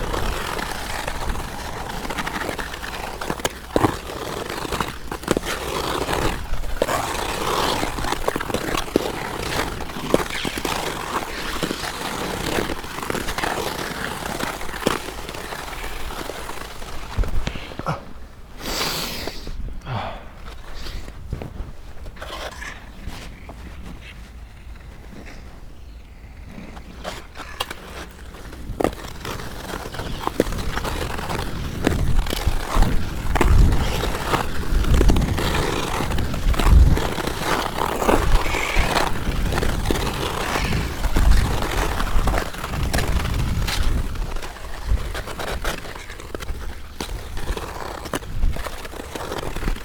Olsztyn, Polska - Ice skating (1)
Ice skating with lavalier mics inside gloves. Zoom H4n.